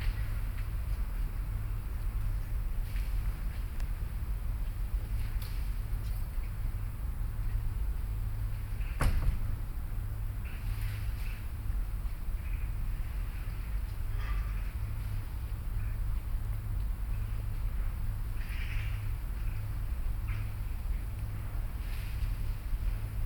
STUDNIČKOVA, Praha, Česká republika - Magpies of Albertov
evening street with magpies
Univerzita Karlova V Praze, Prague, Czech Republic, 6 October 2013